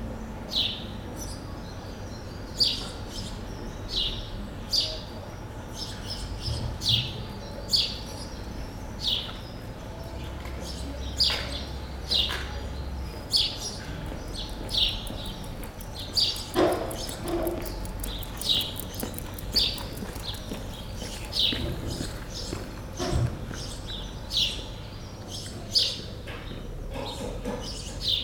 Morning ambience on the center of a small village, a very quiet morning.